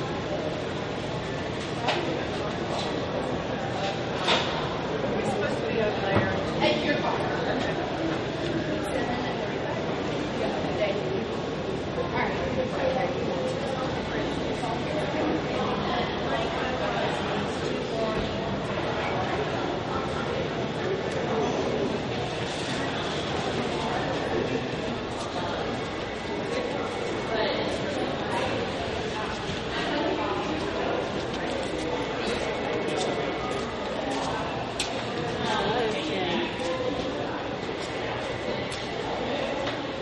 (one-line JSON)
{"title": "Boone, NC, USA - Mall Talk", "date": "2015-09-25 03:44:00", "description": "recording in boone mall", "latitude": "36.20", "longitude": "-81.67", "altitude": "956", "timezone": "America/New_York"}